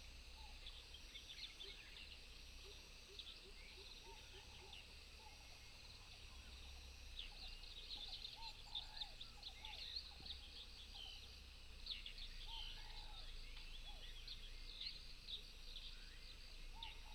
桃米巷, 南投縣埔里鎮 - Birdsong
Birdsong
Binaural recordings
Sony PCM D100+ Soundman OKM II
Puli Township, 桃米巷9-3號, 30 April 2015